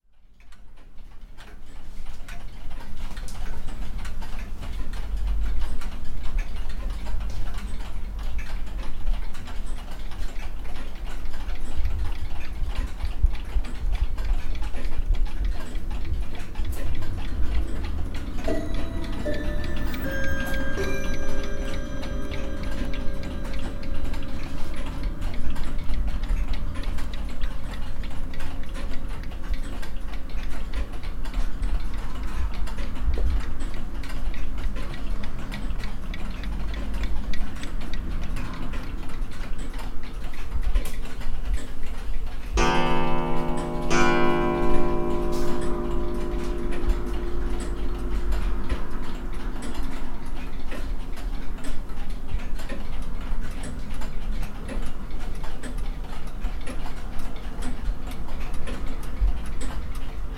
The antique clock repair shop in Carrick on Shannon is full of wonderful sounds. I wish I could have spent hours there.
Co. Leitrim, Ireland, 11 December